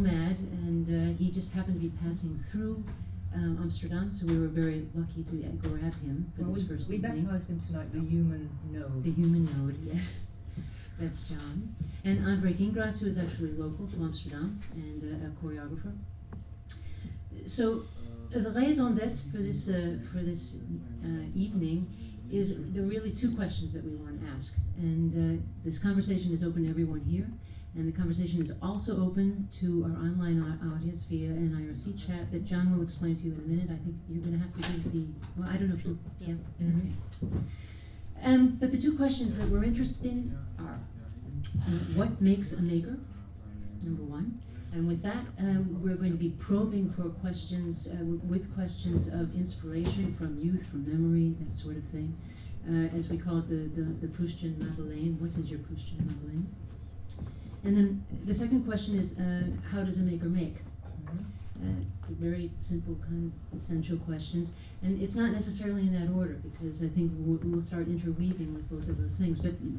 neoscenes: killertv fragment
A short fragment from the premiere episode of KillerTV with the Waag Society in their new pakhuis de Zwijger studio
Amsterdam, The Netherlands, March 2007